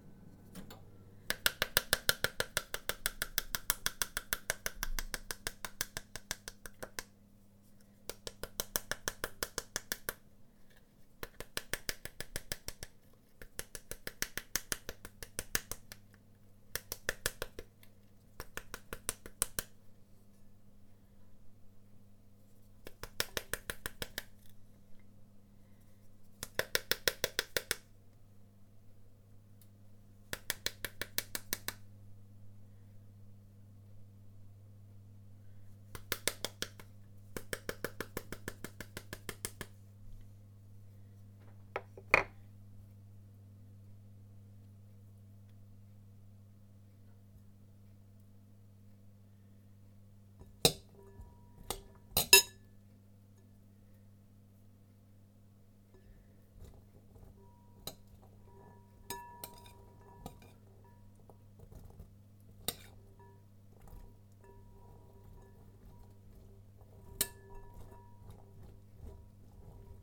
{"title": "My kitchen, Reading, UK - spanking a pomegranate to make the seeds fall out", "date": "2015-01-10 13:03:00", "description": "I am currently knitting a swatch based on pomegranates and have been buying these fruits in order to study them for my knitterly research. They can be time-consuming to prepare if you want to include them in a salad, and most folks recommend that you cut them in half and spank them with a wooden spoon in order to extract the seeds. I have been enjoying finding the best technique for this; if you thrash the pomegranate too hard it falls to bits, but you do need to be a bit firm in order to knock the seeds out. This sound recording features my perfected pomegranate-spanking technique. I had a very tasty fruit salad following this light culinary violence.", "latitude": "51.44", "longitude": "-0.97", "altitude": "55", "timezone": "Europe/London"}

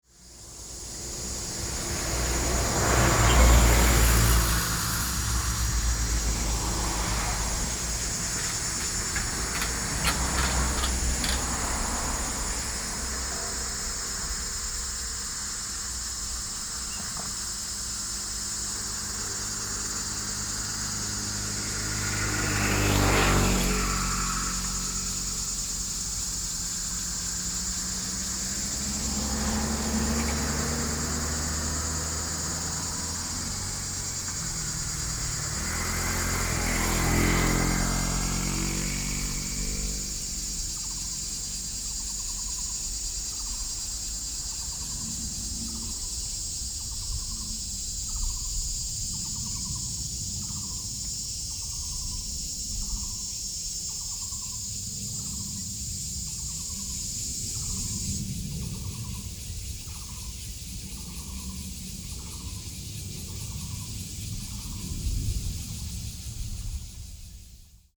{"title": "Baolin Rd., Linkou Dist. - Roadside in the mountains", "date": "2012-07-04 10:24:00", "description": "Cicadas cry, Bird calls, traffic sound, Aircraft flying through\nSony PCM D50", "latitude": "25.10", "longitude": "121.36", "altitude": "222", "timezone": "Asia/Taipei"}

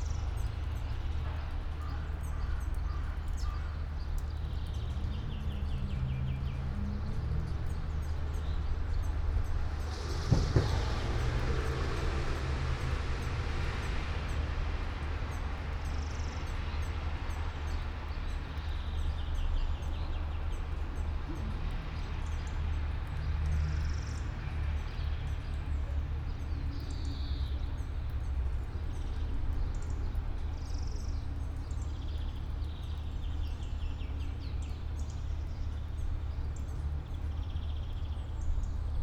{"title": "all the mornings of the ... - apr 6 2013 sat", "date": "2013-04-06 09:29:00", "latitude": "46.56", "longitude": "15.65", "altitude": "285", "timezone": "Europe/Ljubljana"}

